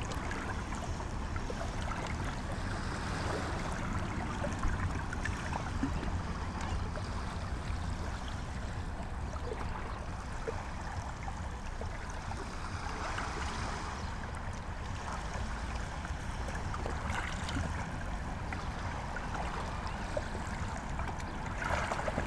Crete, sea at the road
Galatas, Greece, 28 April 2019, 14:30